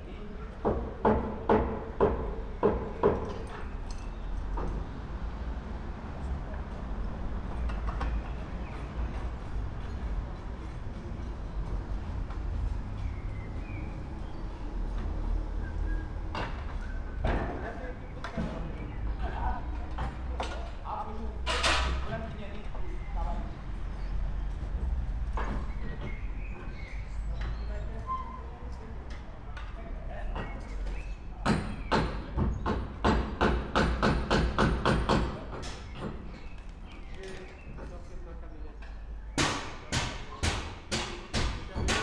construction work opposite of St.Joseph church, Esch-sur-Alzette
(Sony PCM D50, Primo EM272)
Canton Esch-sur-Alzette, Lëtzebuerg, 10 May 2022